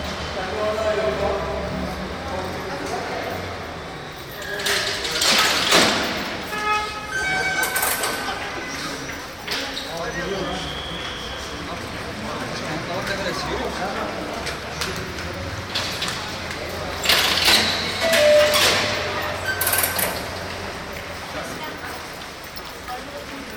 tunnelbahn taksim-kabatas istanbul - Istanbul, railway taksim - kabatas
Entrance hall of innercity cog railway. The often repeated 3 tone melody is the signal of the automatic gate. Recorded may 2003. - project: "hasenbrot - a private sound diary"